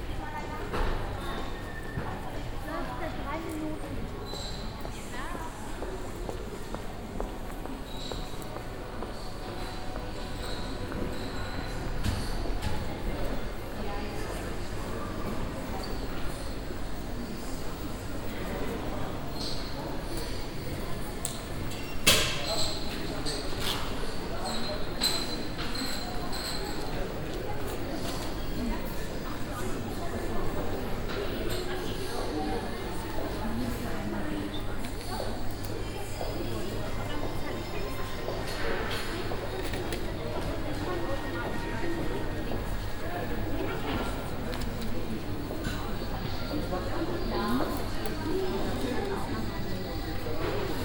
Düsseldorf, Konrad-Adenauer Platz, Kinogebäude - düsseldorf, konrad-adenauer platz, kinogebäude
Eingangshalle zu einem Kino Center, Hintergrundsmuzak, Werbeankündigungen, Warteschlange an der Kasse, Ticket- Kommunikationen
soundmap nrw: social ambiences/ listen to the people - in & outdoor nearfield recordings
24 January 2009, 16:46